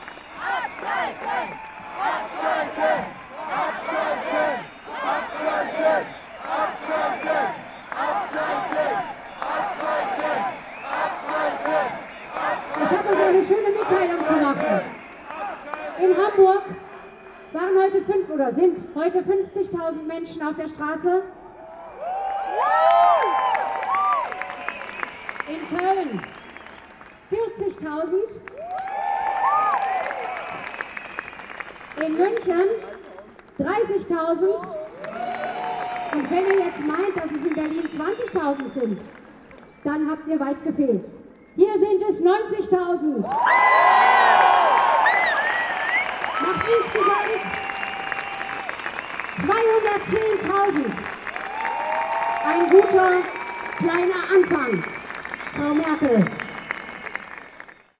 speaker announcing numbers of anti nuke protesters in germany. 90000 in berlin, the numbers laters rise up to 120000